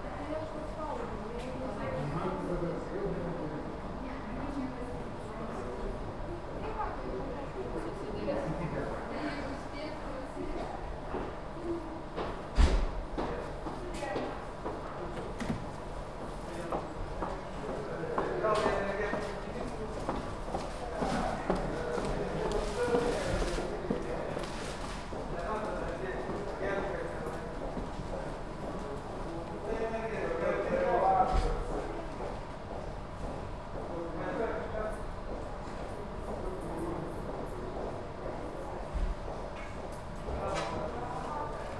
at the security post of the House of Lithuanian Parliament
Lithuania, 18 September 2014, ~17:00